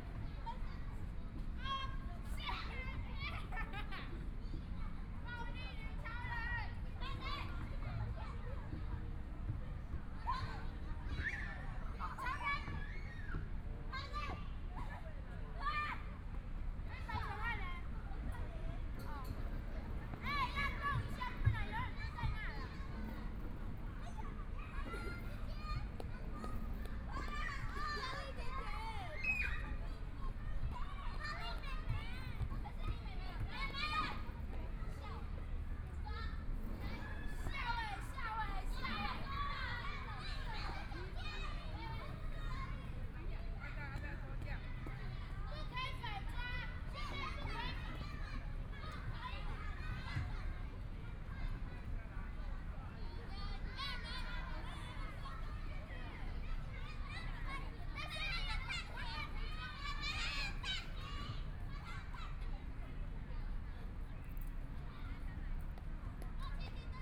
榮星公園, Zhongshan District - Kids sounds
Kids play area, Binaural recordings, Zoom H4n+ Soundman OKM II